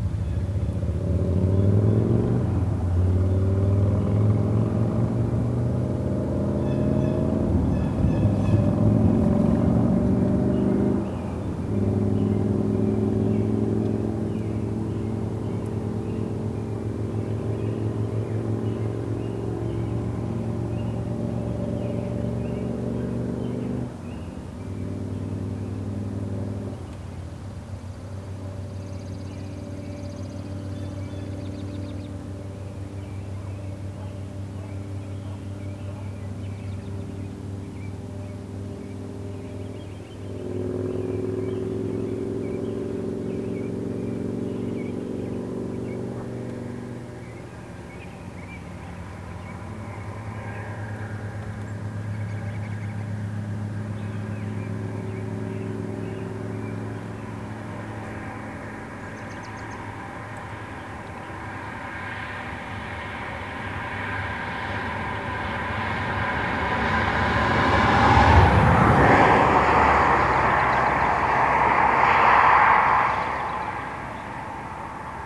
1000 barrels of Canadian tar sands crude oil spilled here on July 27 2012. Less than one year later, excavation and repair work is still clearly visible due to distinct plants which grow only where topsoil was disturbed. Expect many more spills such as this due to pipeline rupture, negligence, and other causes all across the country if / when the XL pipeline gets built.
Wisconsin, United States of America, 5 May, 17:09